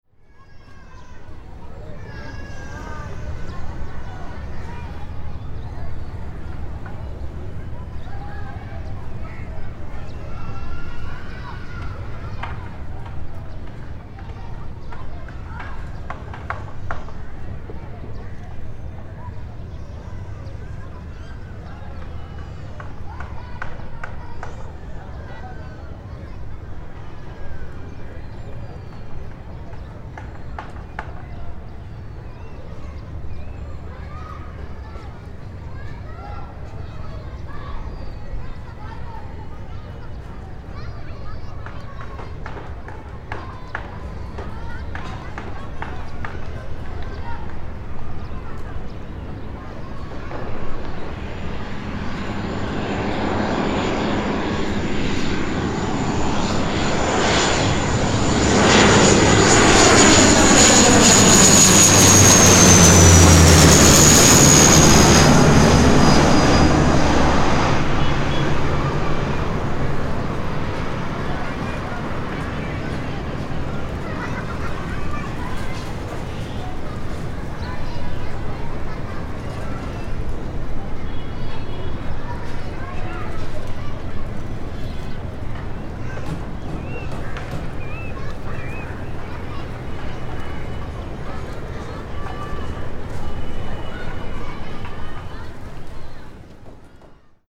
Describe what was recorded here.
This recording is made from a rooftop in Pakuriya, a neighbourhood very close to Dhaka international airport. You get to hear the overhead airplanes from very close.